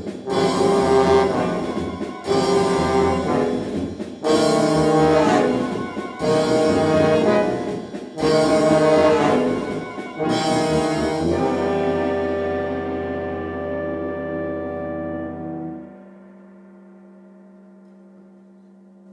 Flintsbach, Gemeindekeller - brass band rehearsal, flintsbach
"Blasmusik Flintsbach" (i. e. Brass Ensemble Flintsbach, local amateur brass band) rehearsal of music for theatre piece "Der jüngste Tag" at the rehearsal room. Piece going to be played this summer at the local folk theatre. recorded may 25, 08 - project: "hasenbrot - a private sound diary"